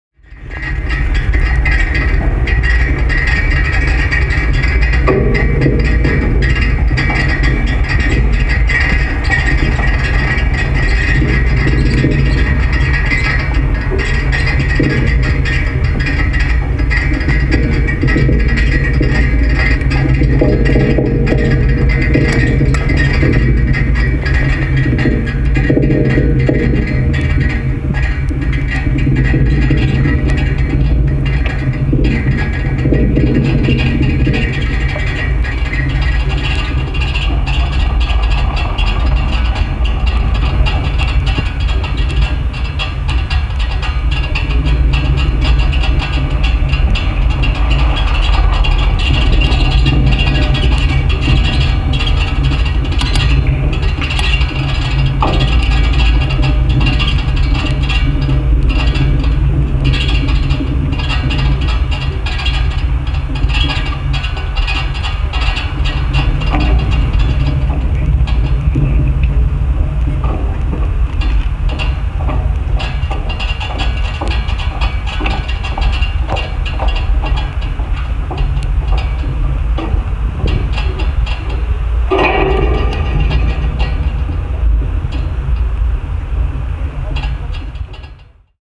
Sabrina Footbridge, Worcester, UK - Sabrina Bridge
The Sabrina Footbridge is cantilevered and when warm expands and scratches the eastern end against the concrete as people walk making the structure shake slightly. This was captured with a very inexpensive contact microphone clamped to the handrail at the point where the sound is produced. MixPre 3.
23 June 2019, ~13:00